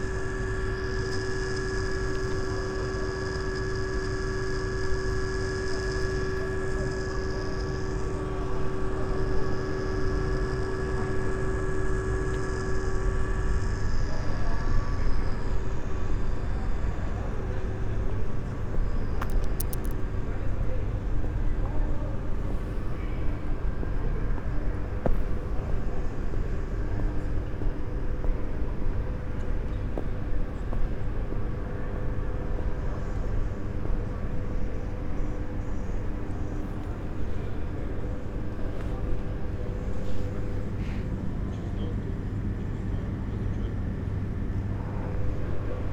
candelabrum, trg leona štuklja, maribor - light projector
4 April 2014, 23:40